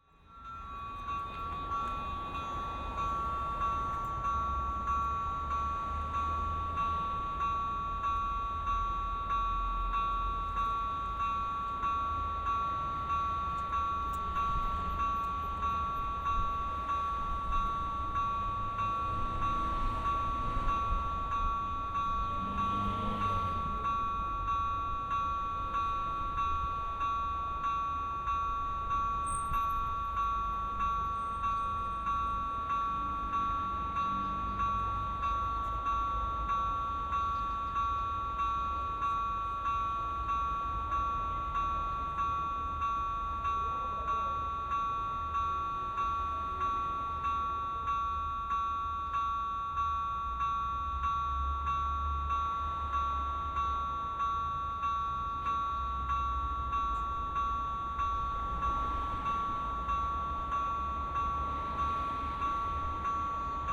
Train bar at Altea, Hiszpania - (28) BI Train passing
Binaural recording while barrier dropped, waiting for the train to pass.
Zoom H2n, Soundman OKM